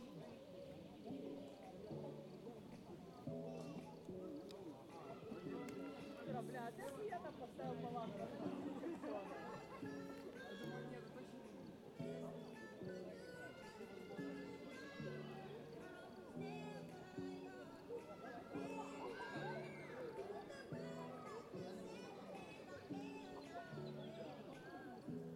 провулок Прибузький, Вінниця, Вінницька область, Україна - Alley12,7sound16makeshiftbeach
Ukraine / Vinnytsia / project Alley 12,7 / sound #16 / makeshift beach